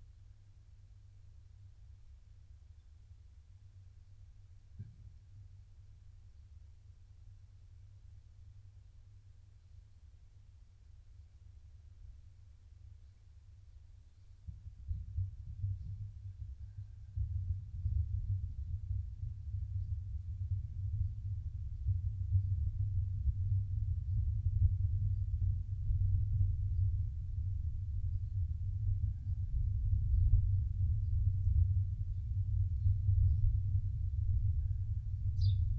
Luttons, UK - thunderstorm in a bottle ... sort of ...
thunderstorm in a bottle ... sort of ... pair of lavalier mics inside a heavy weight decanter ... bird calls ... song from ... song thrush ... blackbird ... house sparrow ...
26 July, 18:30